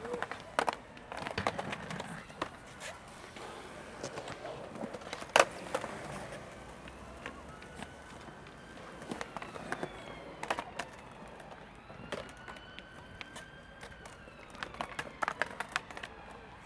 Rose Walk, The Level, Brighton, Vereinigtes Königreich - Brighton - The Level - Skater Park
In Brighton at the Level - a public skater park - the sounds of skating
soundmap international:
social ambiences, topographic field recordings
England, United Kingdom, March 2022